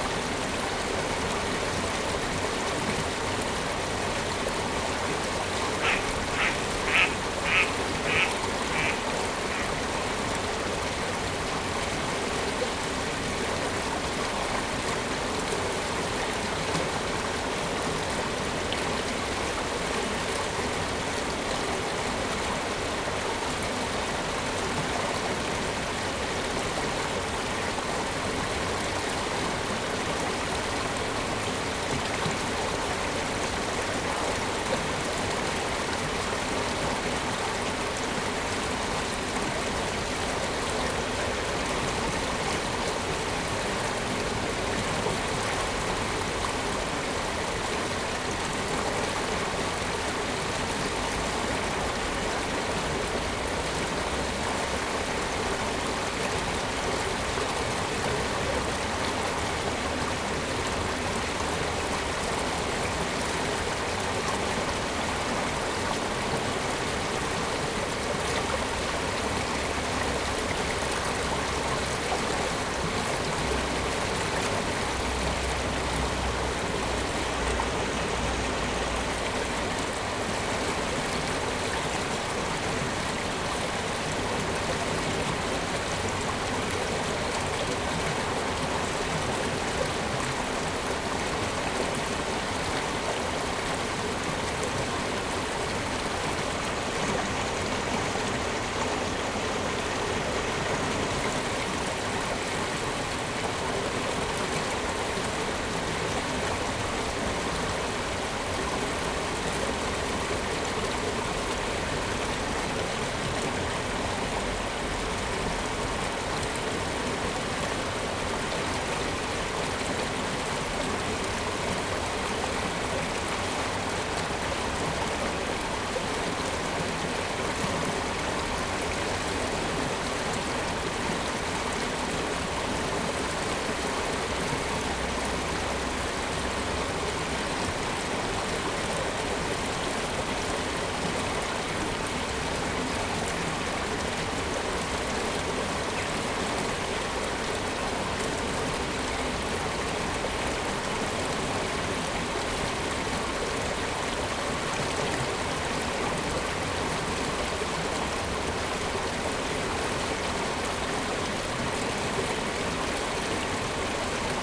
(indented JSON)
{
  "title": "Rowsley, UK - Caudwell Mill ...",
  "date": "2016-11-03 07:10:00",
  "description": "Mill race soundscape ... Caudwell Mill ... Rowsley ... background noise ... calls of mallard ducks ... lavalier mics clipped to baseball cap ...",
  "latitude": "53.19",
  "longitude": "-1.62",
  "altitude": "103",
  "timezone": "Europe/London"
}